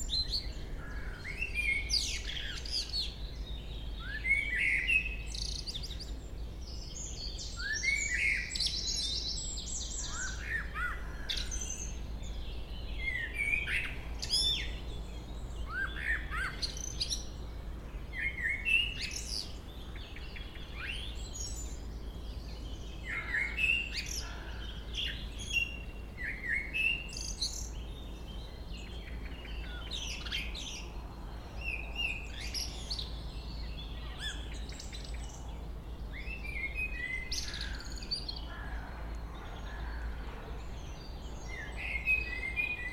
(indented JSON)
{
  "title": "Chemin des cris, Chindrieux, France - Merle",
  "date": "2021-03-25 16:30:00",
  "description": "Un beau chant de merle local.",
  "latitude": "45.82",
  "longitude": "5.84",
  "altitude": "239",
  "timezone": "Europe/Paris"
}